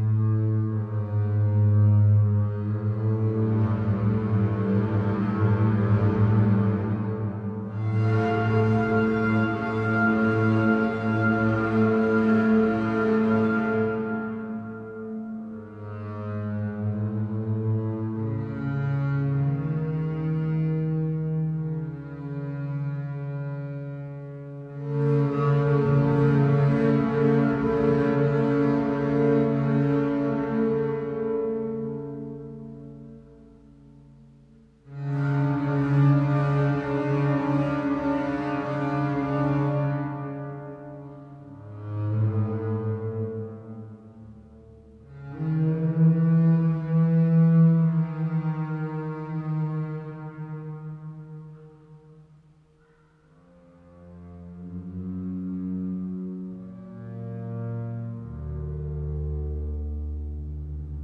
Michele Spanghero and Patrick McGinley play a double bass in an abandoned nuclear missile bunker in eastern Latvia.
22 October 2008, 00:58